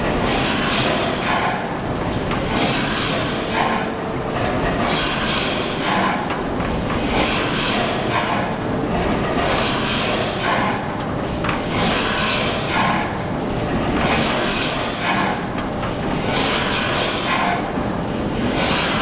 Steel Factory in Kapfenberg - Stahlpresse (schuettelgrat)